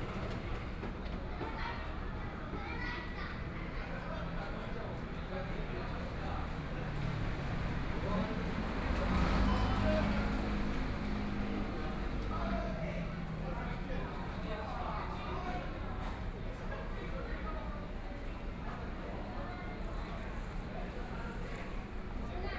Xinsheng Rd., Taitung City - In front of the convenience store
In front of supermarket convenience, Traffic Sound, Moon Festival
there are many people on the road in the evening, Barbecue
Taitung County, Taiwan, 7 September 2014, ~21:00